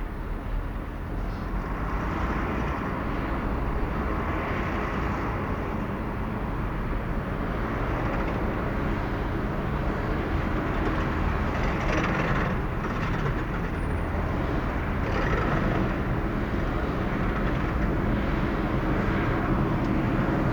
Tsentralnyy rayon, Woronesch, Oblast Woronesch, Russland - Ul. Shishkowa at night
recorded from the window of a panel flat. Construction going on
Voronezh Oblast, Russia, 6 June